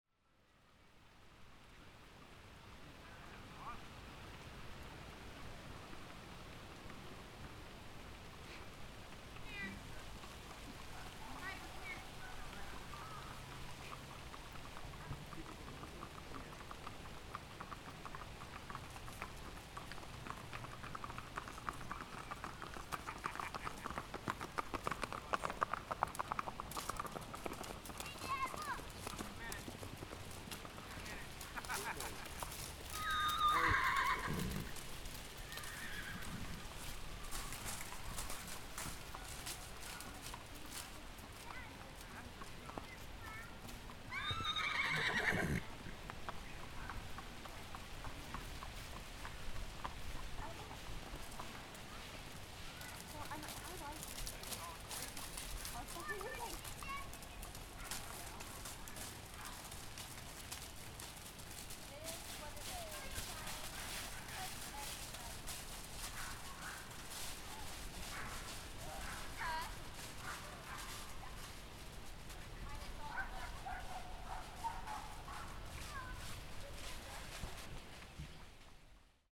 Sounds heard at the Horseman's Campground. Recorded using a Zoom H1n recorder. Part of an Indiana Arts in the Parks Soundscape workshop sponsored by the Indiana Arts Commission and the Indiana Department of Natural Resources.
Indiana, United States of America